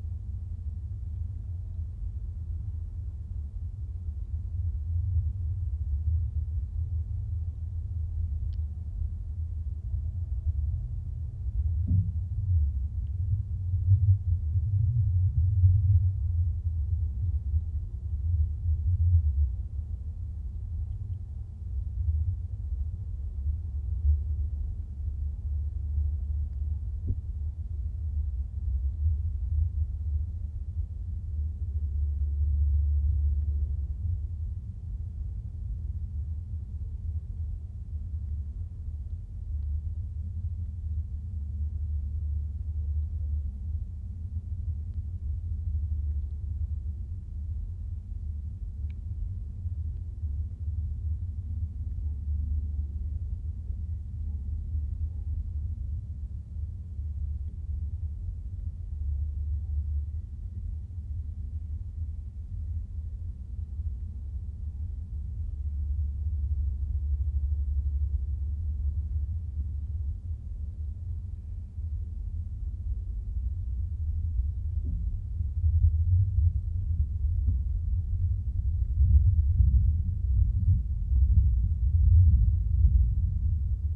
Grybeliai, Lithuania, abandoned car
rusty, abandoned car for some unknown reason left in a meadow in soviet times...now the meadow turned to a young forest...contact mics on the car...does it still dream of highways?
Utenos apskritis, Lietuva, 7 January 2020